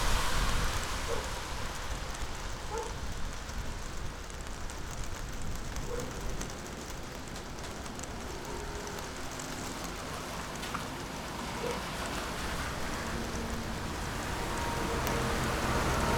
Poznan, Piatkowo district, bus stop on Strozynskiego Str. - rain on bus stop roof
rain drops on plastic roof + street noise